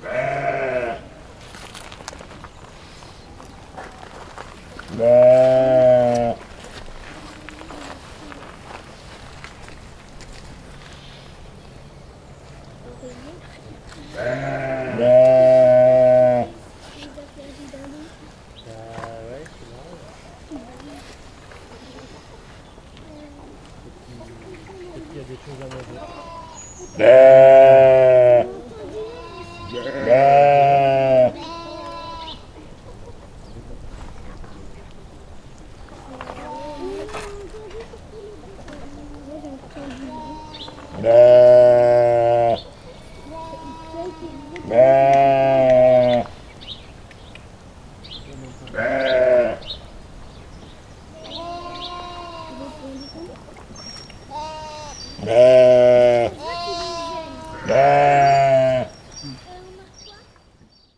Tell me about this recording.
Moutons au jardin François, Zoom H6 et micros Neumann